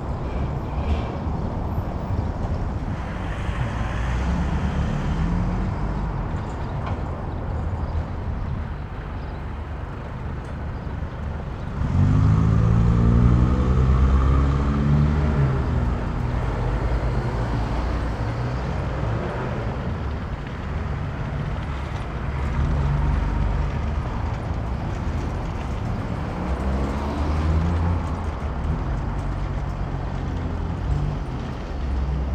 Berlin: Vermessungspunkt Friedelstraße / Maybachufer - Klangvermessung Kreuzkölln ::: 29.03.2011 ::: 10:09